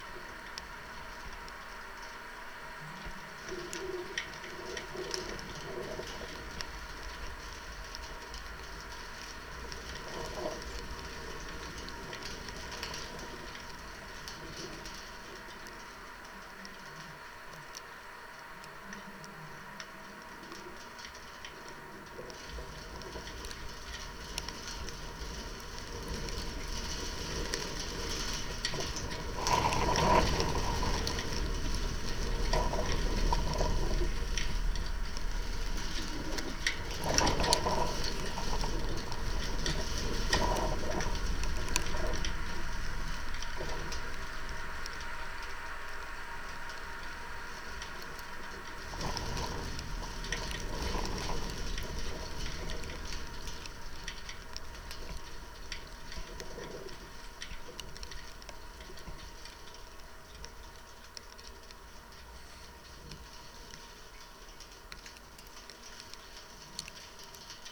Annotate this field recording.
Recorded with contact microphones placed on the tin-plate road sign. In some way it works like a membrane "catching" not only snowflakes hitting the tin, but also the sound of cars passing by...